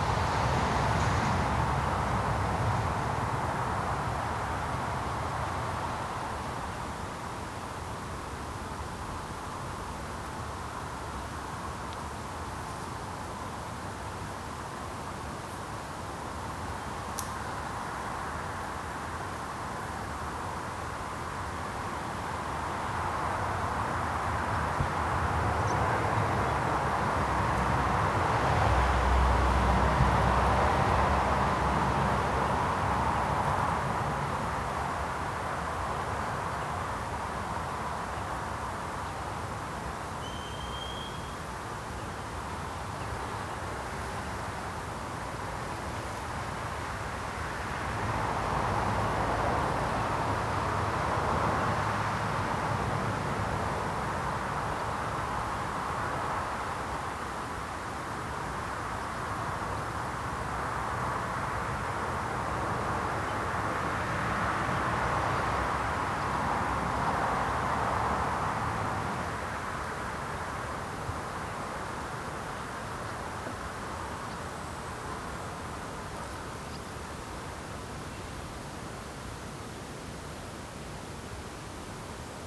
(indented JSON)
{
  "title": "Grange, Co. Limerick, Ireland - Large stone circle",
  "date": "2013-07-18 12:00:00",
  "description": "The Grange stone circle (Lios na Grainsi) is the largest stone circle in Ireland. While regarded by many as a sacred place, it can be quite noisy on a normal day of the week.",
  "latitude": "52.51",
  "longitude": "-8.54",
  "altitude": "81",
  "timezone": "Europe/Dublin"
}